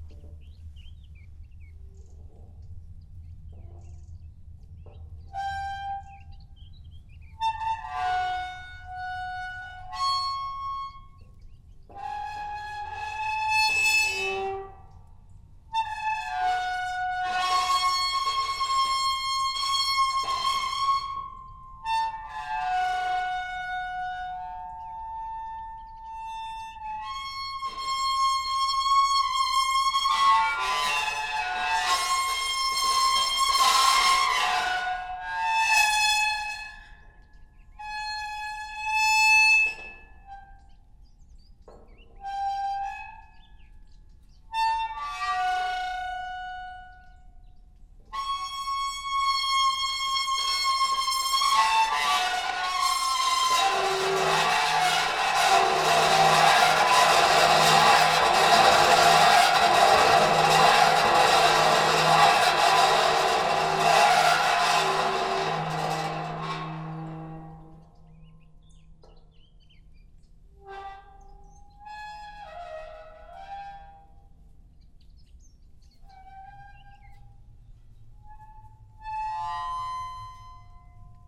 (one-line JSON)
{"title": "Venables, France - Fence song", "date": "2016-09-21 06:30:00", "description": "A turning fence is making horrible noises when we are using it. So, we made a concert ! I can promise : there's no neighbour here, not even a cow, as it was still 6 AM on the morning !", "latitude": "49.20", "longitude": "1.28", "altitude": "14", "timezone": "Europe/Paris"}